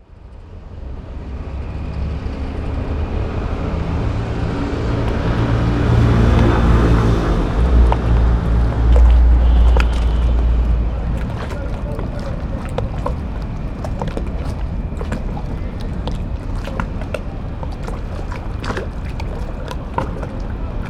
Sete, Quai Vauban
Sète, Quai Vauban
Sète, France, 9 July